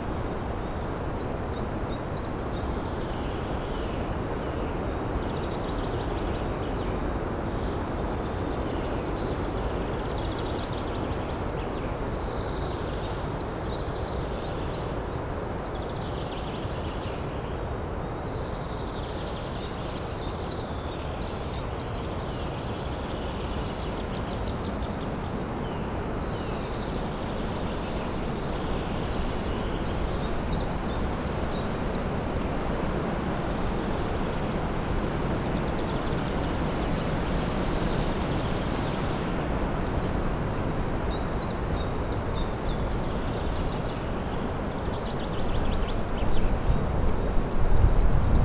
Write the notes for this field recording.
forest clearing submerged in the sound of strong wind whirring in the trees. withered leaves being blown around by the stronger gusts. some unsettling creaks nearby, thought it's a wild boar for a second. Morasko Meteorite Nature Reserve project